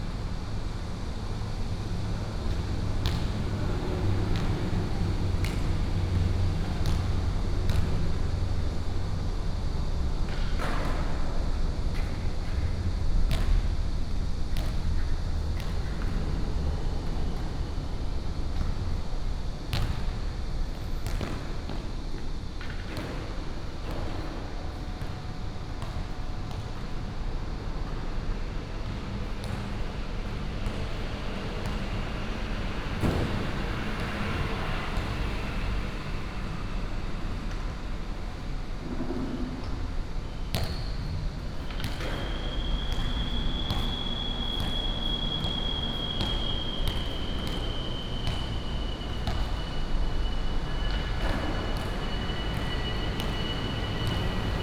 Ln., Jieshou Rd., Taoyuan Dist. - Under the fast road
Under the fast road, Cicadas, Basketball court, skateboard, Dog sounds, Traffic sound